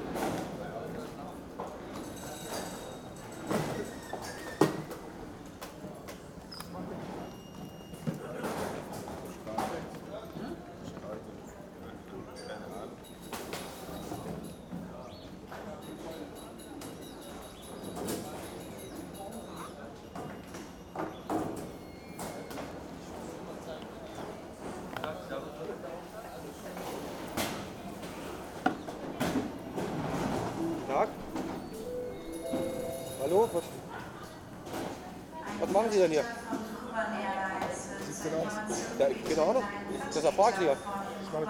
koeln airport, security check - examination
security check and deep inspection.
Cologne/Bonn Airport, Cologne, Germany, June 2010